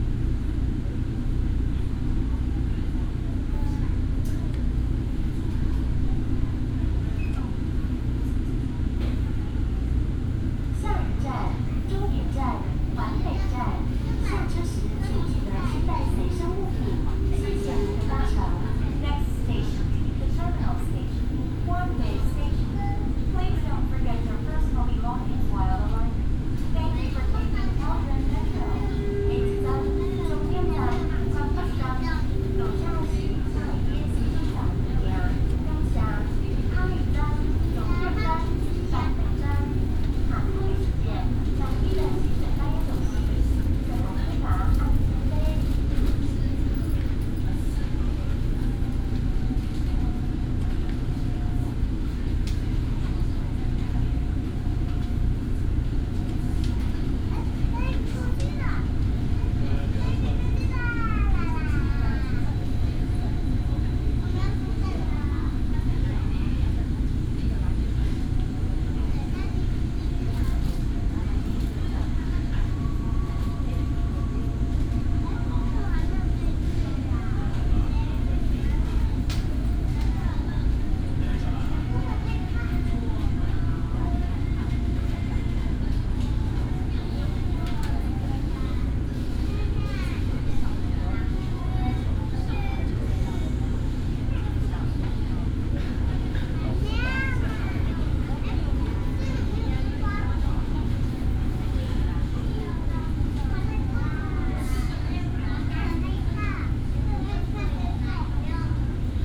Taoyuan Airport MRT, Zhongli Dist., Taoyuan City - In the MRT compartment
In the MRT compartment, broadcast message sound, Child, Binaural recordings, Sony PCM D100+ Soundman OKM II